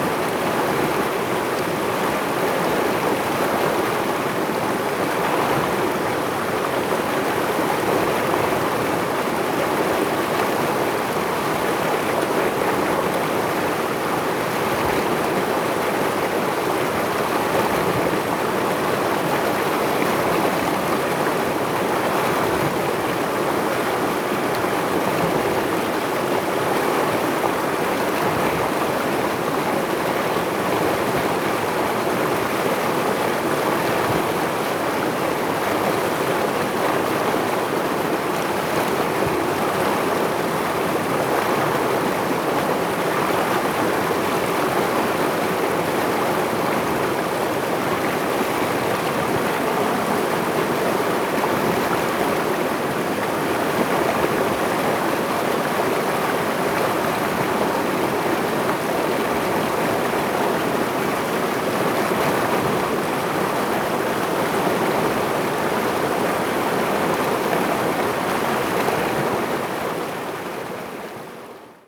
{"title": "種瓜坑溪, 埔里鎮成功里, Taiwan - In the middle of the river", "date": "2016-04-19 14:36:00", "description": "In the middle of the river\nZoom H2n MS+XY", "latitude": "23.96", "longitude": "120.89", "altitude": "400", "timezone": "Asia/Taipei"}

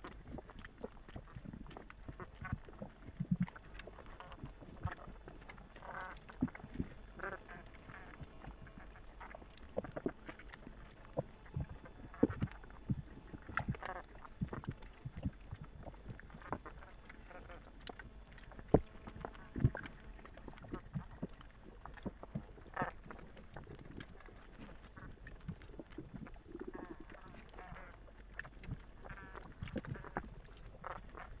{"title": "Gilão River, Tavira, PT", "date": "2010-08-22 02:00:00", "description": "Hidrophone recording at River Gilão", "latitude": "37.13", "longitude": "-7.65", "altitude": "7", "timezone": "Europe/Berlin"}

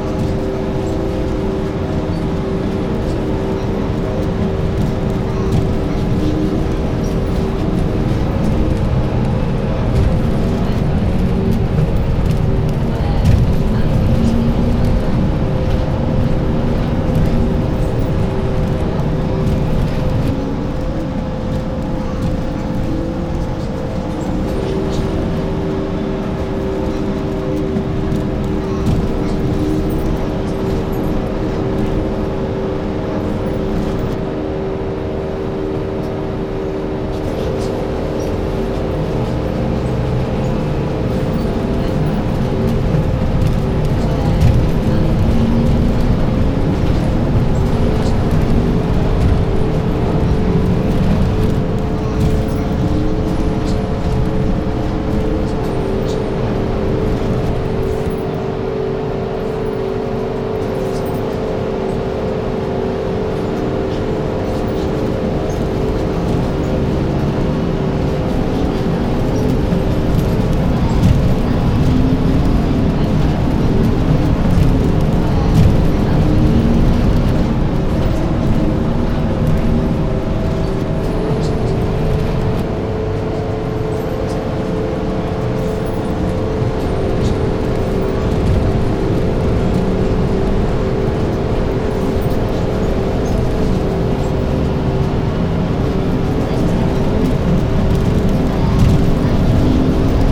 {"title": "Wearmouth Bridge, Sunderland, UK - Bus journey into Sunderland City Centre", "date": "2016-08-15 13:00:00", "description": "Travelling on a the Number 4 Bus into Sunderland City Centre. Leaving from the Northside of the Rive Wear ending up in John Street, Sunderland.\nThe original source recording has been processed, looped, layered and manipulated to show a more exciting, alternative way of experiencing the normal mundane way of travel, while still keeping the integrity and authenticity of the first captured recording.", "latitude": "54.91", "longitude": "-1.38", "altitude": "13", "timezone": "Europe/London"}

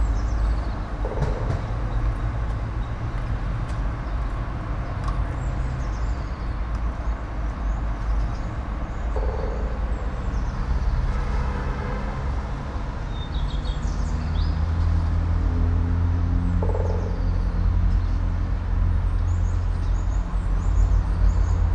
{"title": "all the mornings of the ... - apr 18 2013 tru", "date": "2013-04-18 07:53:00", "latitude": "46.56", "longitude": "15.65", "altitude": "285", "timezone": "Europe/Ljubljana"}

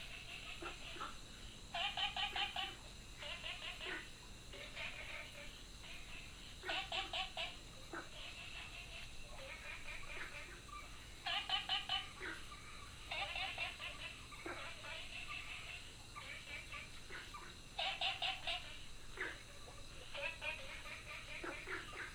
{"title": "樹蛙亭, 埔里鎮桃米里 - Frogs chirping", "date": "2015-06-09 22:21:00", "description": "Frogs sound\nBinaural recordings\nSony PCM D100+ Soundman OKM II", "latitude": "23.94", "longitude": "120.93", "altitude": "459", "timezone": "Asia/Taipei"}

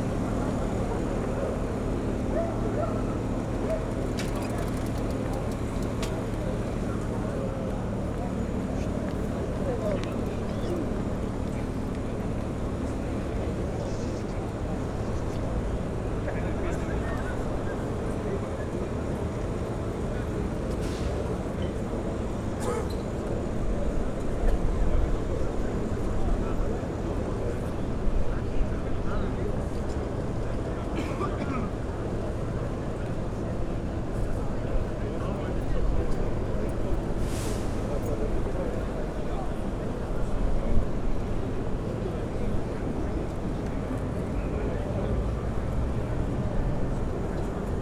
{"title": "berlin: hermannplatz - the city, the country & me: 1st may riot soundwalk", "date": "2011-05-01 20:13:00", "description": "soundwalk around hermannplatz, police cars, vans, trucks and water guns waiting on the revolution\nthe city, the country & me: may 1, 2011", "latitude": "52.49", "longitude": "13.42", "altitude": "41", "timezone": "Europe/Berlin"}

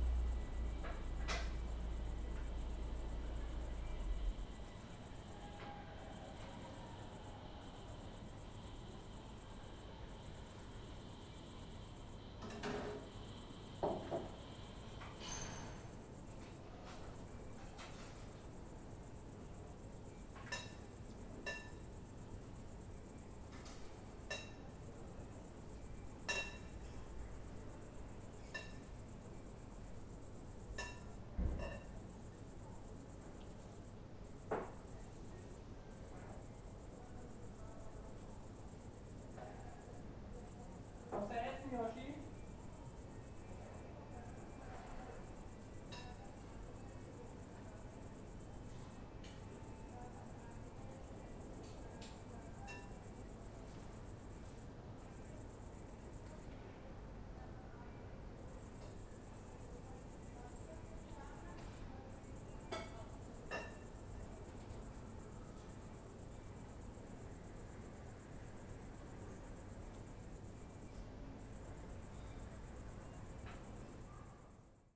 {"title": "Köln, Maastrichter Str., backyard balcony - grille", "date": "2011-09-15 20:45:00", "description": "lonely late summer cricket, backyard abmience", "latitude": "50.94", "longitude": "6.93", "altitude": "57", "timezone": "Europe/Berlin"}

